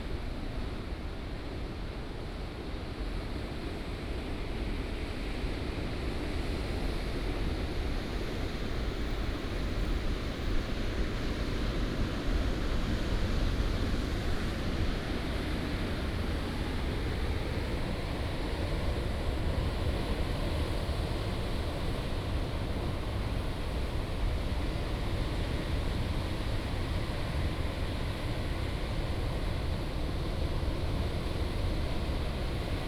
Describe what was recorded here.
On the coast, Sound of the waves